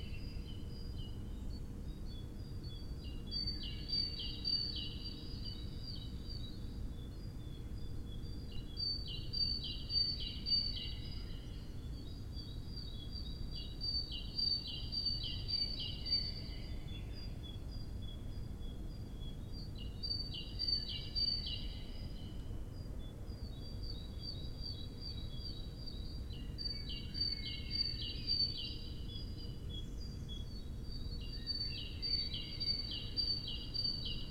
Fisksätra Allé, Sikg - 4h11 le réveil des oiseaux